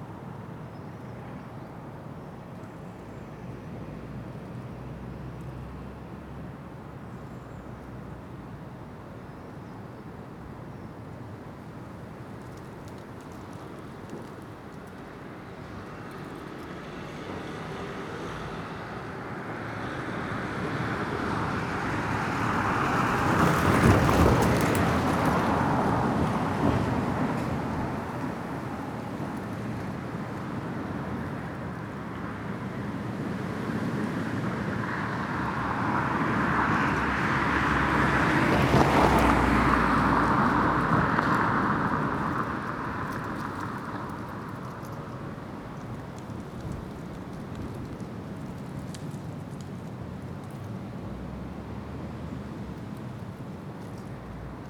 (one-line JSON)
{"title": "Contención Island Day 66 inner west - Walking to the sounds of Contención Island Day 66 Thursday March 11th", "date": "2021-03-11 09:40:00", "description": "The Poplars High Street Elmfield Road North Avenue\nA slate\nslipped from a ridge\nlies in the garage gutter\nA man with two dogs\ncurious\nstops to talk\nThe song of the reversing ambulance\nechoes along the avenue\nMarks on the window frame\nbeneath the eaves\npossibility of a nest", "latitude": "55.00", "longitude": "-1.62", "altitude": "74", "timezone": "Europe/London"}